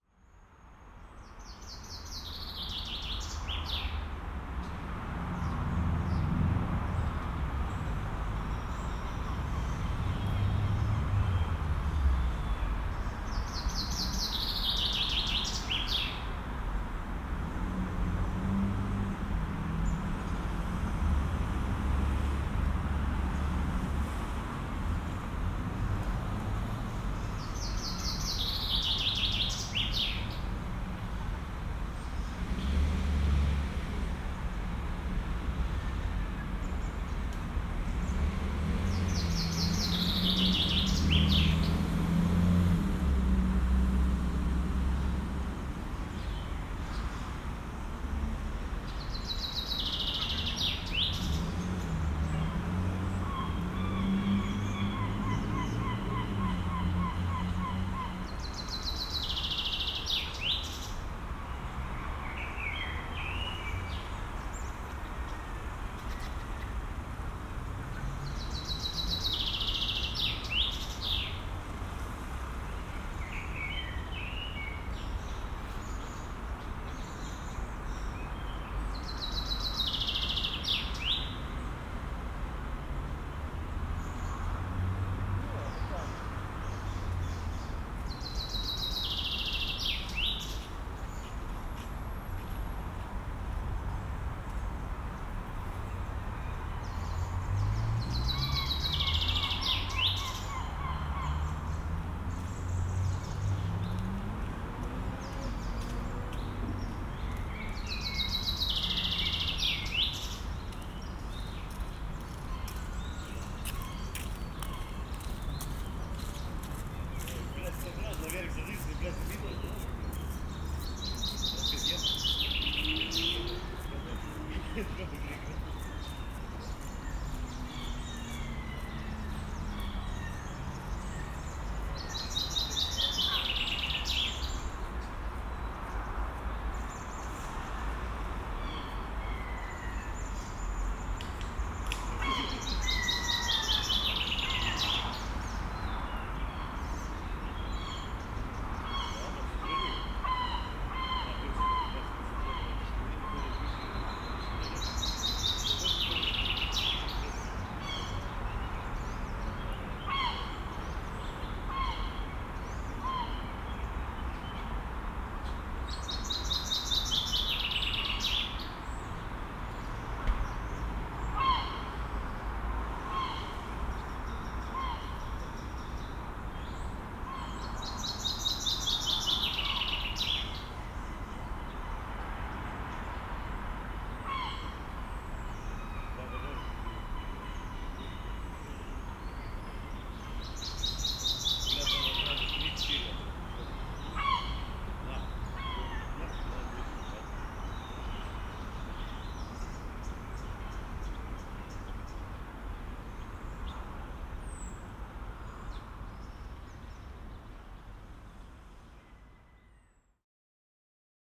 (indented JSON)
{
  "title": "Klaipėda, Lithuania, at school - laipėda, Lithuania, at school",
  "date": "2021-07-02 17:20:00",
  "description": "The soundscape at Zaliakalnis school. A pair of drunkards...",
  "latitude": "55.70",
  "longitude": "21.14",
  "altitude": "9",
  "timezone": "Europe/Vilnius"
}